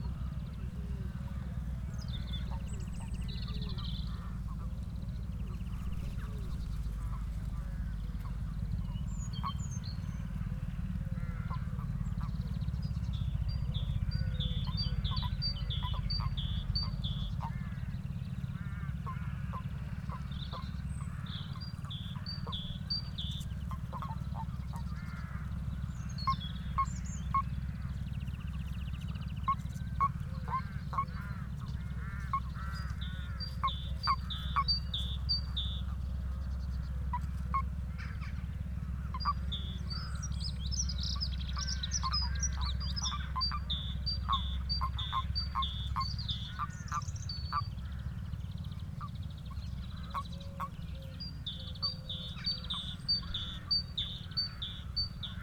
Wilcot, Wiltshire, UK - Birds and the Bees
Recorded on the banks of the Kennet and Avon canal near Wilcot, as part of me walking from my house on the Kennet in Reading to Bristol over the course of a few months in 2011. There were loads of common frogs spawning at the edge of the canal, accompanied by a dozen different birds including, Woodpigeon, Chiff-chaff, Great tit, Blue Tit, Greenfinch, Collard Dove, Wren, Redshank?, Moorhen, Willow warbler and Robin. Recorded on an Edirol with custom capsule array.
25 March 2011, 15:09